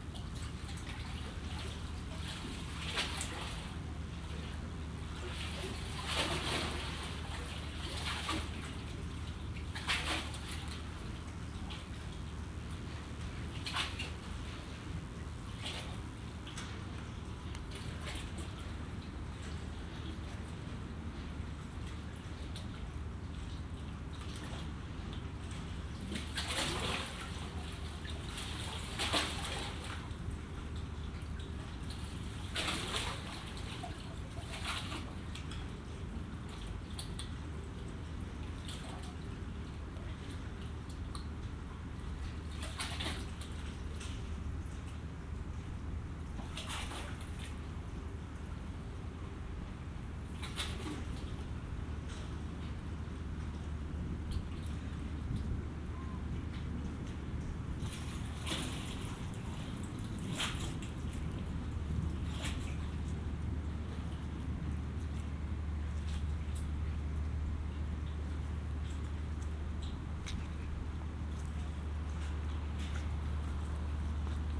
Schiemond, Rotterdam, Nederland - Tussen wal en schip
Water between the quay and a cargo ship.